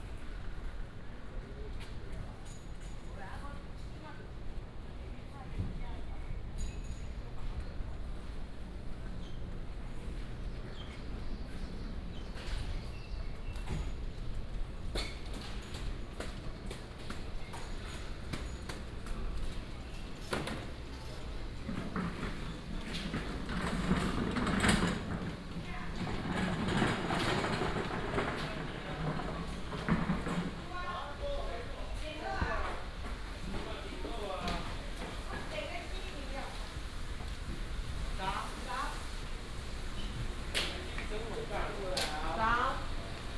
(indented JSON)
{
  "title": "Futai St., Taishan Dist., New Taipei City - walking in the Street",
  "date": "2017-05-06 05:37:00",
  "description": "bird sound, Traffic sound, Preparing for market operation, Traditional market",
  "latitude": "25.06",
  "longitude": "121.43",
  "altitude": "13",
  "timezone": "Asia/Taipei"
}